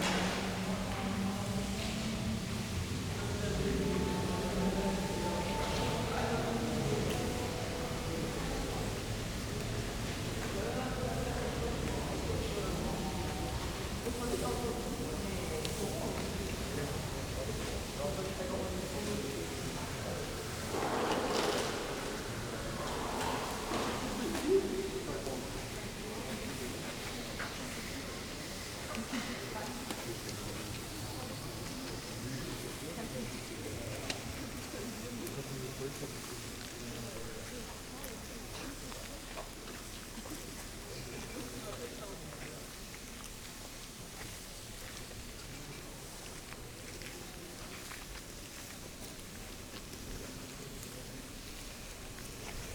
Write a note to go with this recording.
members of the Besenballett (broom ballet), a sound performance project by artist katrinem, rehearsing in the hallway, entrance ambience before opening, (Sony PCM D50, Primo EM272)